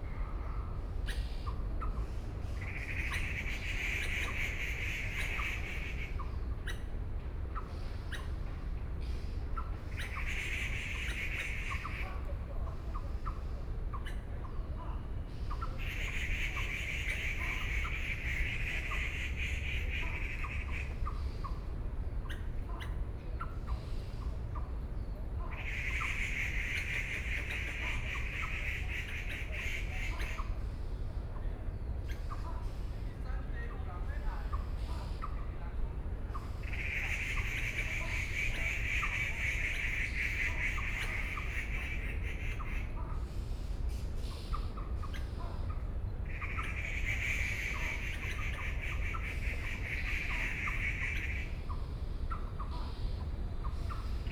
Birdsong, Sony PCM D50 + Soundman OKM II